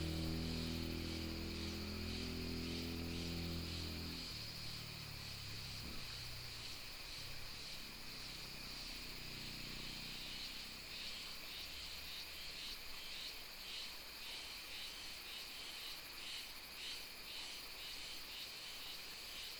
八仙橋, Emei Township, Hsinchu County - On the bank of the river
On the bank of the river, Cicadas sound, Sound of water, Traffic sound, Binaural recordings, Sony PCM D100+ Soundman OKM II